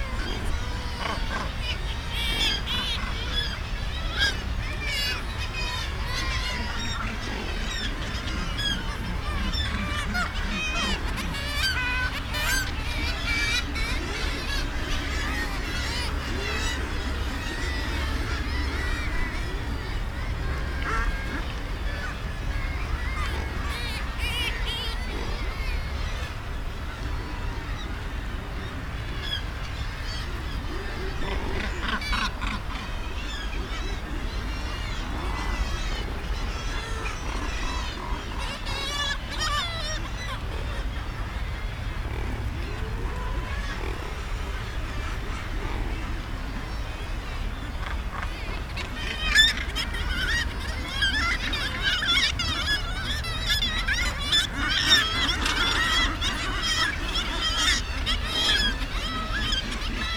East Riding of Yorkshire, UK - Kittiwakes ... mostly ...
Kittiwakes ... mostly ... kittiwakes calling around their nesting ledges at RSPB Bempton Cliffs ... bird calls from ... guillemot ... razorbill ... gannets ... lavalier mics on a T bar fastened to a fishing net landing pole ... some wind blast and background noise ...
Bridlington, UK, May 2017